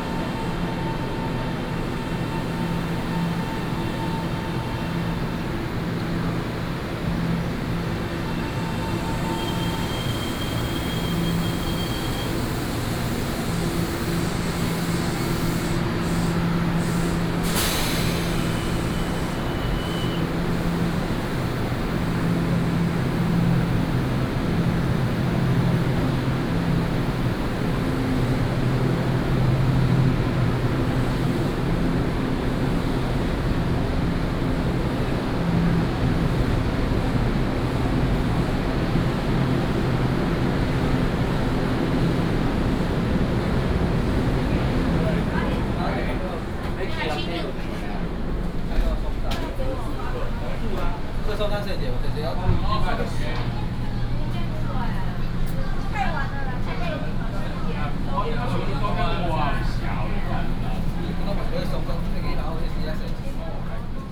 from the station hall, walking to the station platform